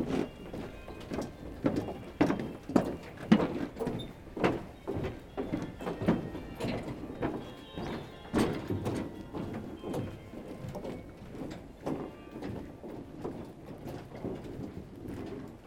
Following a person walking on the very pleasant pontoon, made in wood, over the Dijle river. Far away, the (also) pleasant sound of the OLV-over-de-Dijlekerk carillon.
21 October 2018, 11:45